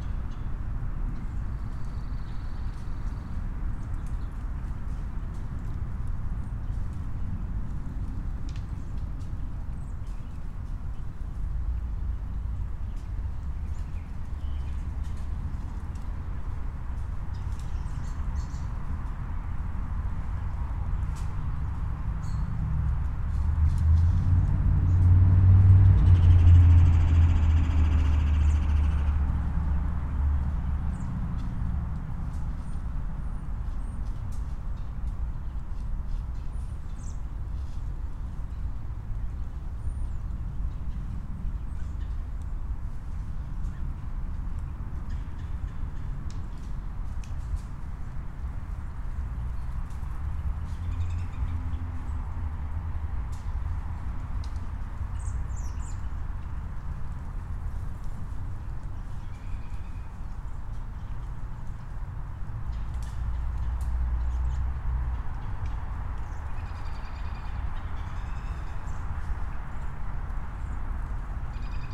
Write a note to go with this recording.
A tiny neighborhood park with a dog trail. This recording was taken in the woods near the end of the trail. Lots of birds are heard in this recording. Airplanes and traffic in the background are also present. Plant matter can be heard dropping from the trees as the birds fly by. [Tascam DR-100mkiii & Primo EM-272 omni mics w/ improvised jecklin disk]